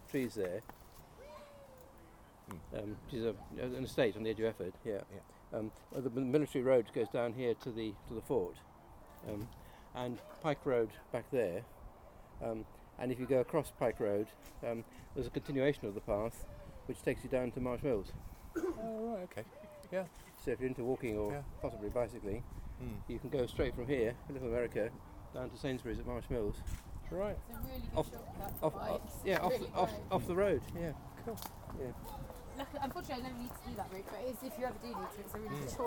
Efford Walk Two: About track by subway - About track by subway
Plymouth, UK, 24 September 2010